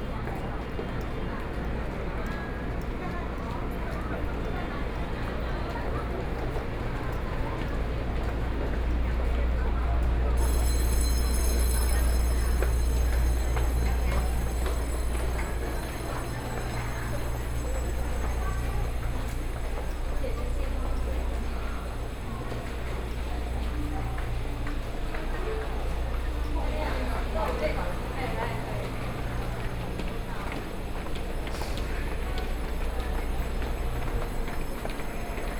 Taichung Station, Taiwan - soundwalk
Arrive at the station, After the underpass, Then out of the station, Zoom H4n+ Soundman OKM II